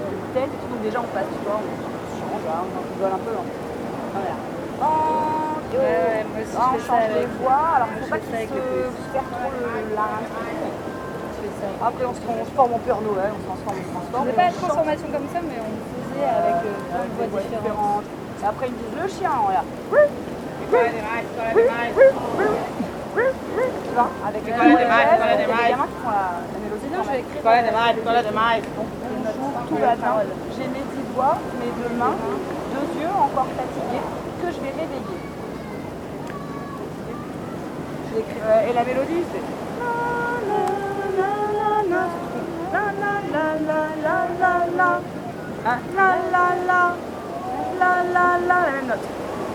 Brazil, Bahia, Ilha de Itaparica - Une brésilienne et cinq françaises sur l'île d'Itaparica.
Mercredi (Quarta-feira de cinzas), après-midi, bikini babes chant sur la plage.
March 2014